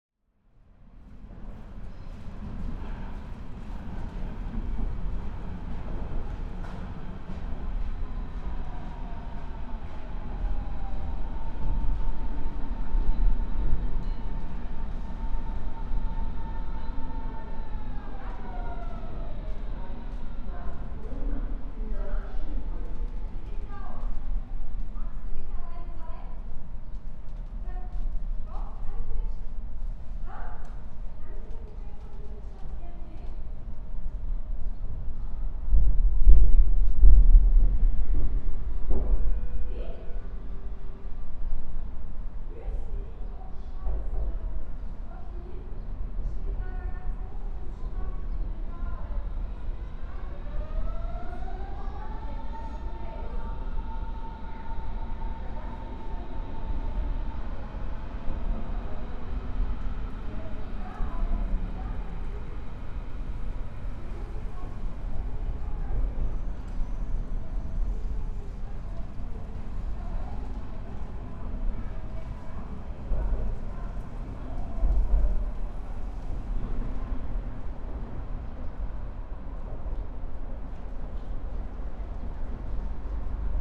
Bösebrücke, Bornholmer Str., Berlin, Deutschland - Bösebrücke Under
A sunny November afternoon.
The large space under the bridge swings in deep blows from the traffic above.
People, dogs, and the sounds of Bornholmerstrasse station fill the place.
If you know the place, you can feel the current lockdown in the way humans use it.